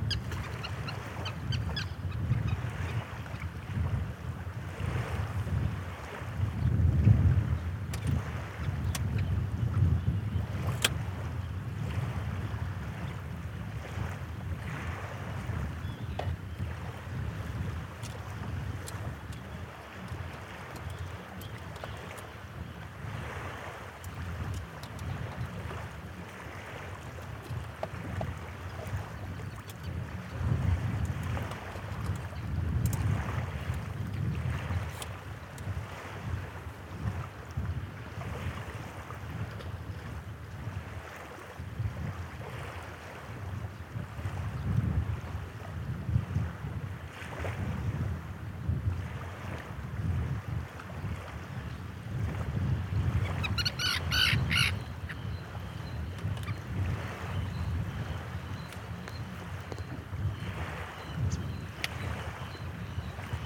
Toft ferry terminal, Shetland Islands, UK - Listening to the seabirds while waiting for the ferry to Yell
I was waiting for the ferry to Yell at the Toft terminal, and as I sat in my car, I realised how amazing the seabirds sounded all around me, so I stuffed my Naiant X-X microphones out of the windows of the car and sat inside listening through these microphones and the FOSTEX FR-2LE to the birdsong around me. It was a sunny day, not bad weather at all, but as you can hear there was something of a breeze.